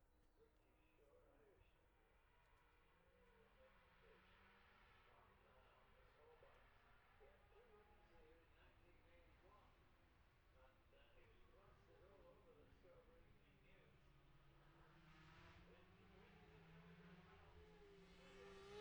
Jacksons Ln, Scarborough, UK - olivers mount road racing ... 2021 ...
bob smith spring cup ... ultra-light weights qualifying ... dpa 4060s to MixPre3 ...